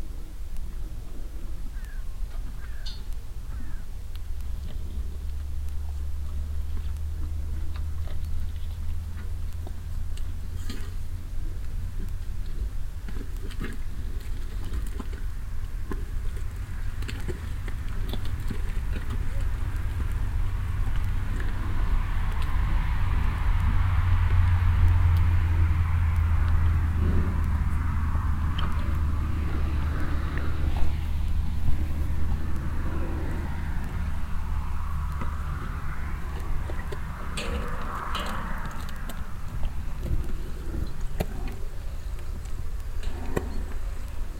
Cows on an open meadow, drinking from a mobile water supply waggon and walking on muddy ground. In the distant from the nearby street some cars passing by.
Wilwerwiltz, Weide, Kühe
Kühe auf einer offenen Weide, von einem mobilen Wasserspender trinkend und auf matschigem Grund laufend. In der Ferne von der nahen Straße die Geräusche einiger vorbeifahrender Autos.
Wilwerwiltz, prairie, vaches
Des vaches sur une prairie ouverte, buvant d’un réservoir d’eau mobile et bruits de pas sur un sol boueux. Dans le fond, on entend des voitures roulant sur la route proche.
Project - Klangraum Our - topographic field recordings, sound objects and social ambiences
3 August, 19:41